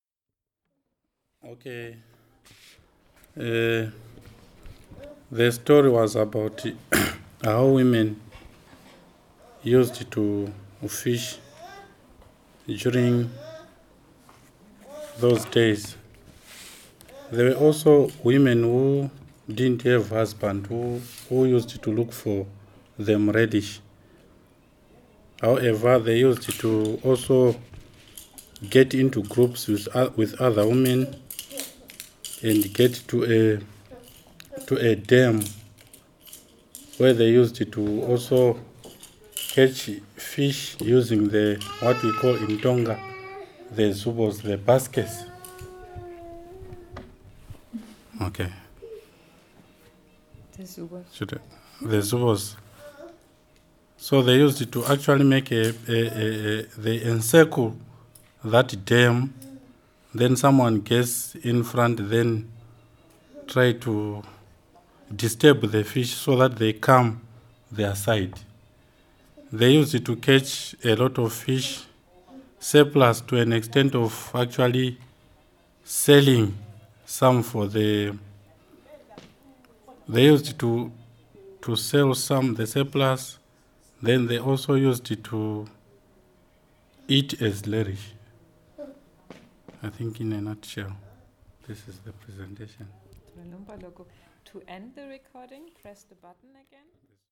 Antony Ncube translates and summerizes what Ottilia said...
Binga, Zimbabwe